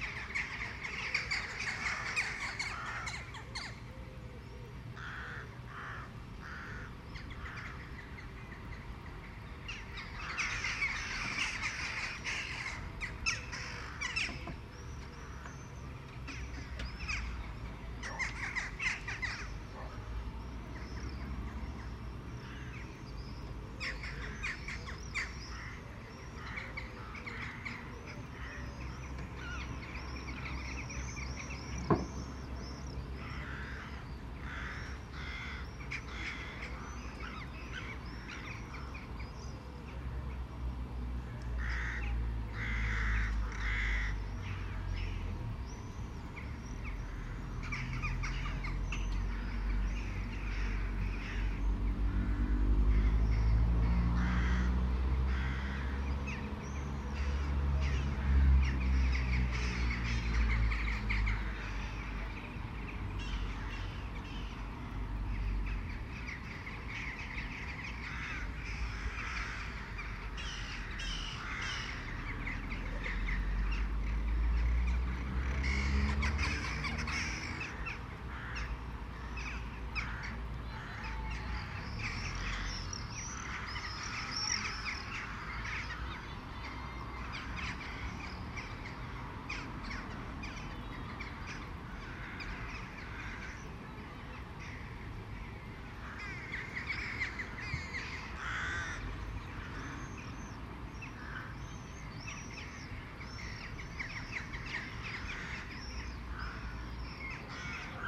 Halesworth market town; sounds of summer through the attic skylight - Roosting rooks flock high above and swifts scream as dusk falls
The darkening evening. Rooks fly back to their roost in flocks of hundreds, maybe thousands. The town gradually quietens after a unusually hummy vehicle (maybe agricultural) passes slowly into the distance.
East of England, England, United Kingdom, 18 July 2021